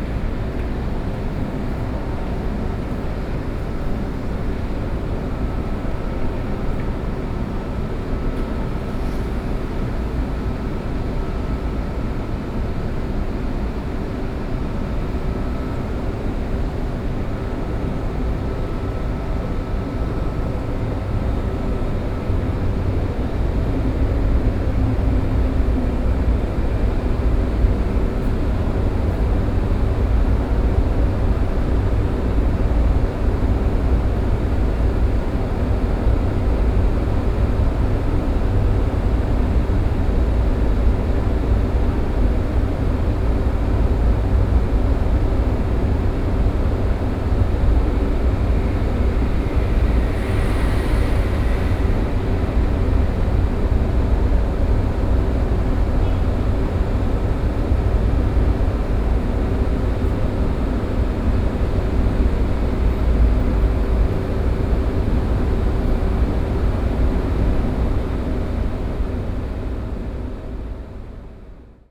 中山區康樂里, Taipei City - air conditioning noise
Building air conditioning noise
5 May 2014, 15:20